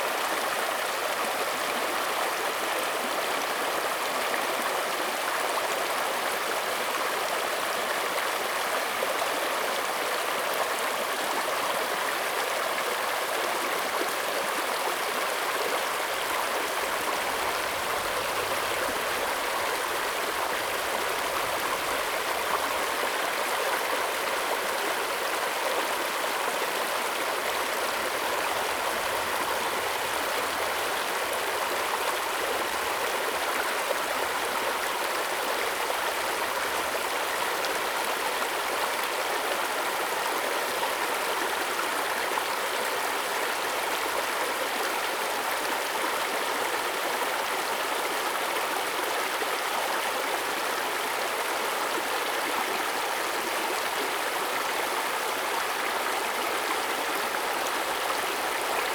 中路坑溪, 埔里鎮桃米里, Taiwan - small Stream
small Stream
Zoom H2n MS+XY